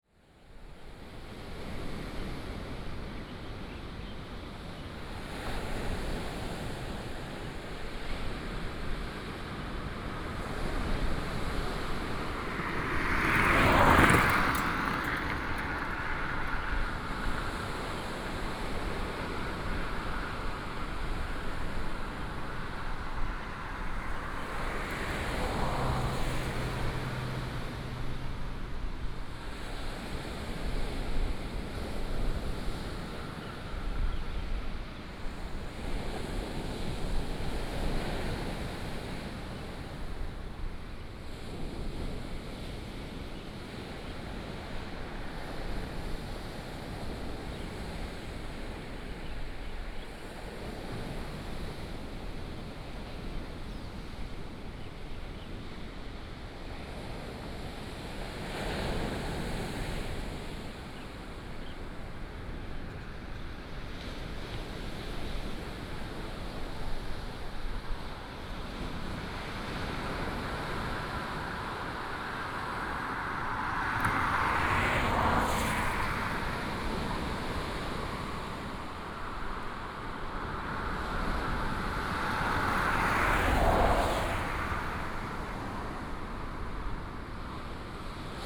南迴公路, Duoliang, Taimali Township - Morning on the coast
Beside the road, Traffic sound, early morning, Chicken roar, birds sound, Sound of the waves
Binaural recordings, Sony PCM D100+ Soundman OKM II